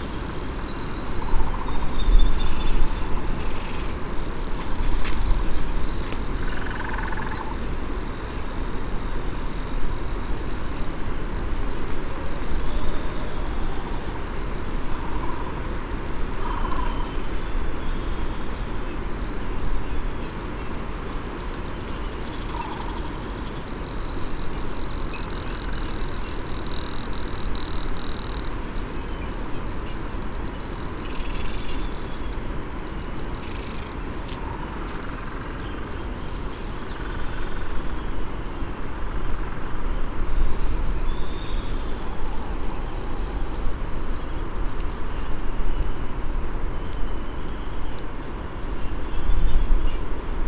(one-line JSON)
{"title": "United States Minor Outlying Islands - great frigate bird ...", "date": "2012-03-16 15:00:00", "description": "Great frigate bird calls and 'song' ... Sand Island ... Midway Atoll ... bird calls ... great frigate bird ... laysan albatross ... red -tailed tropic bird ... white tern ... canary ... black noddy ... parabolic ... much buffeting ... males make the ululating and ratchet like sounds ... upto 20 birds ... males and females ... parked in iron wood trees ...", "latitude": "28.20", "longitude": "-177.39", "altitude": "11", "timezone": "GMT+1"}